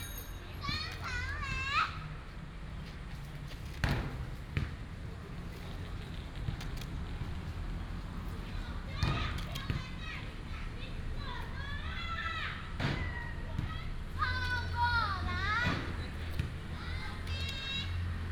兒三公園, Gongguan Township - in the Park
in the Park, traffic sound, play basketball, Child, Binaural recordings, Sony PCM D100+ Soundman OKM II
Gongguan Township, Miaoli County, Taiwan